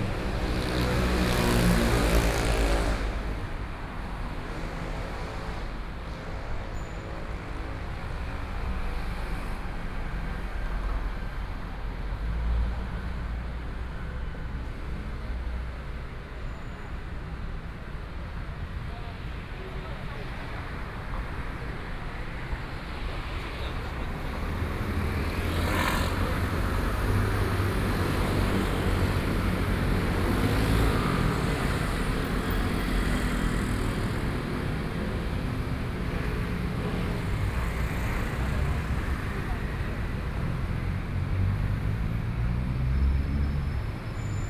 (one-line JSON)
{"title": "中山區聚盛里, Taipei City - Walking through the road", "date": "2014-04-03 11:25:00", "description": "Walking through the streets, Traffic Sound, Walking towards the north direction", "latitude": "25.06", "longitude": "121.53", "altitude": "23", "timezone": "Asia/Taipei"}